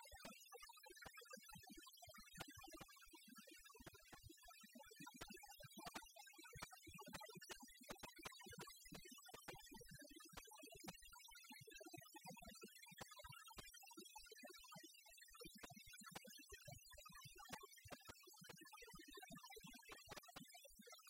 India, Mumbai, Mahalaxmi Dhobi Ghat, Spin dryer, outdoor laundry